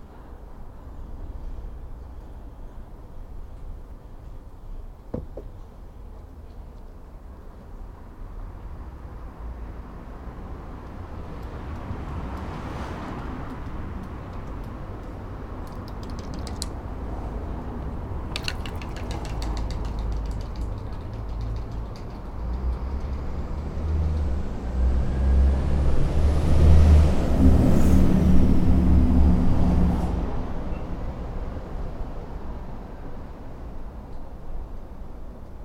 Albany Street, Edinburgh, Edinburgh, UK - Playing the railings and bouncing on the paving stones
19 Albany Street was another address at which Catherine Hogarth lived in Edinburgh as a young girl. I went to stand outside this building, to listen to its ambiences and atmospheres, to play its railings, and to hear the sounds that are present now. Obviously, there is much traffic. However fortuitously there is also a loose paving stone on which one can invent mischievous percussion, and also the sound of some glass recycling being done elsewhere further down the street...